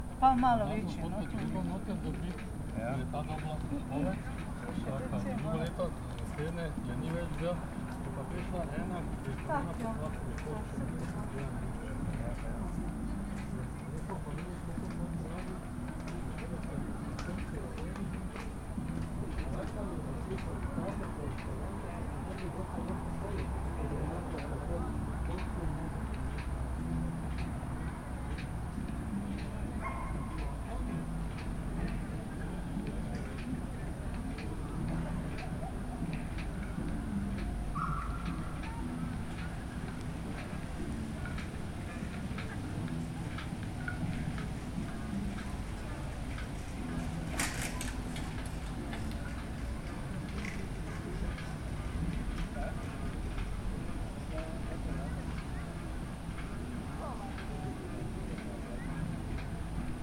Mestni park, Slovenia - corners for one minute
one minute for this corner: Mestni park
Maribor, Slovenia